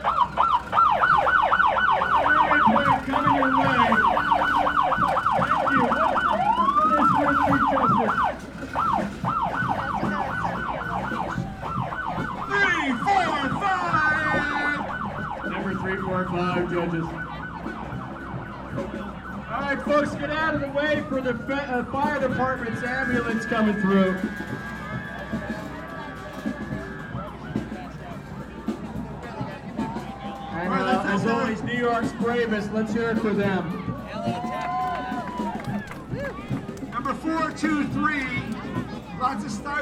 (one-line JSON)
{"title": "Surf Ave, Brooklyn, NY, USA - Coney Island Mermaid Parade, 2019", "date": "2019-06-22 14:31:00", "description": "Coney Island Mermaid Parade, 2019\nZoom H6", "latitude": "40.58", "longitude": "-73.99", "timezone": "America/New_York"}